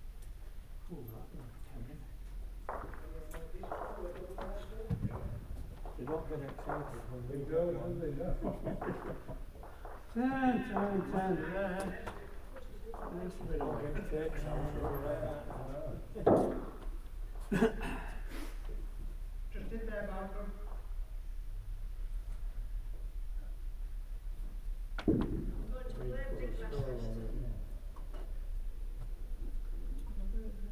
Norton, Malton, UK - Long mat bowls ... Norton rink ...
Long mat bowls ... voices ... heating ... Olympus LS 14 integral mics ...
24 January 2017